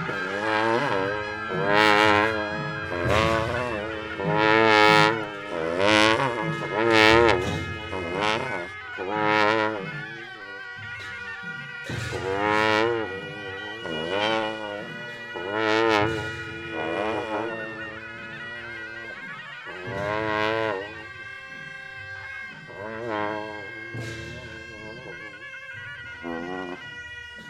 8J6V5HMH+8C - Leh - Ladak - Inde
Leh - Ladak - Inde
Procession sur les hauteurs de la ville
Fostex FR2 + AudioTechnica AT825
Ladakh, India